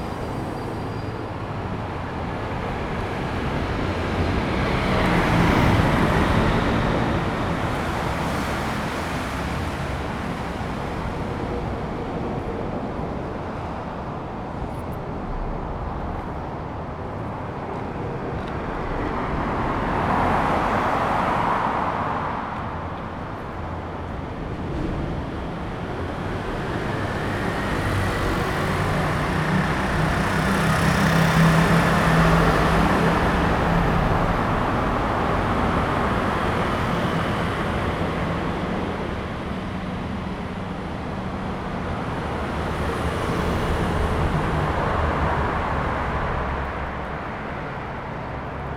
under the high-speed road, Traffic sound
Zoom H2n MS+XY